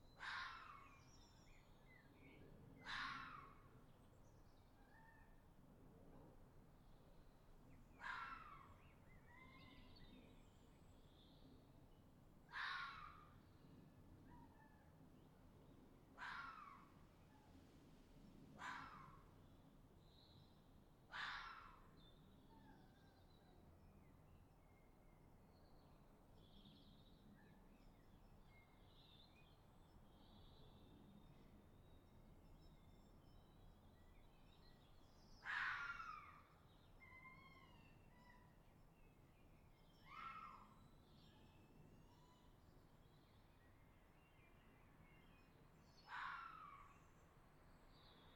Scarlette Manor Way, London, UK - Fox Calls - Brockwell Park
A recording made overnight from my balcony window recording the foxes in Brockwell Park calling, January 2020. It was a calm evening, very little wind. There was some great fox vocalisations in this, recorded using a sony PCMD100 in a rycote blimp.
15 January, 03:00